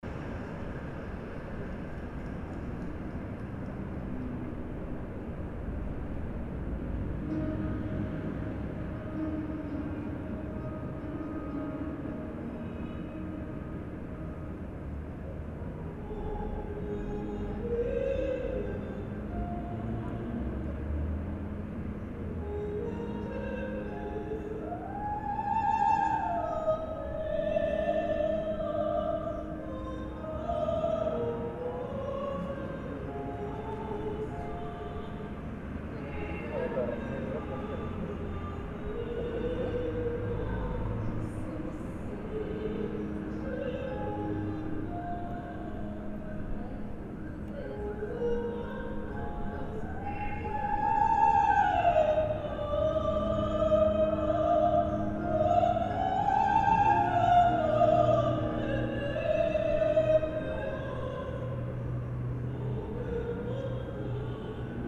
Santa Fe, Bogotá, Colombia - Proyeccion de un concierto a fuera de una sala de conciertos.
grancion de una parte de las piezas.
23 May, ~10:00